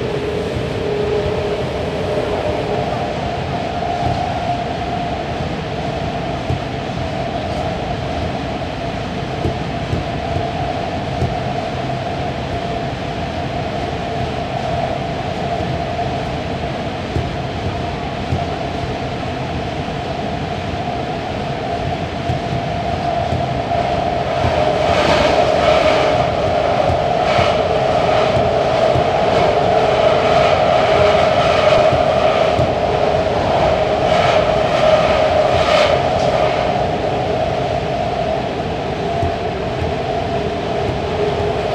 San Francisco, Embarcadero Bart + ride towards the East Bay
San Francisco, Embarcadero Bart station, taking subway towards the West Oakland Bart Station, under the San Franciscan bay
CA, USA